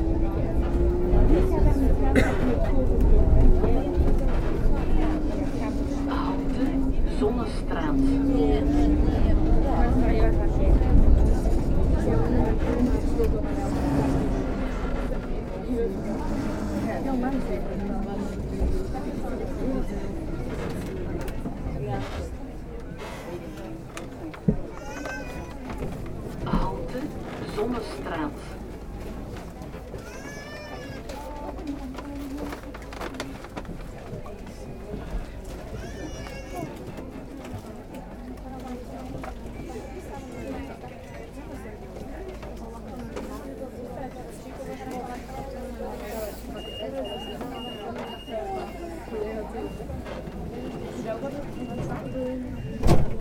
Gent, België - Tramway ride into the old city
Tram ride in the heart of the old city of Ghent. The vehicle is crowded. Very difficult to record (I had to do it three times) because of a good amount of infrabass. However, the route is interesting considering that the vehicle has difficulty with tight curves. Journey from Gravensteen to Van Nassaustraat.
Gent, Belgium, 16 February 2019, 18:45